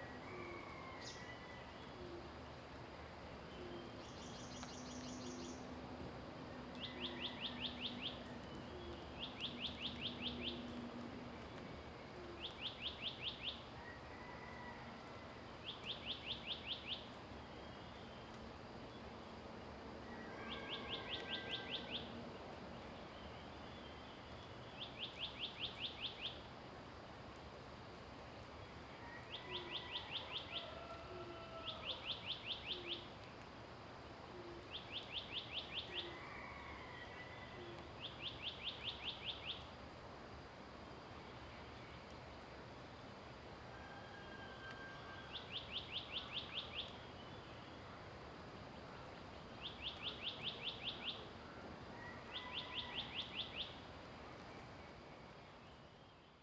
水上巷, 桃米里 Puli Township - early morning
Morning in the mountains, Bird sounds, Traffic Sound, raindrop
Zoom H2n MS+XY
21 April 2016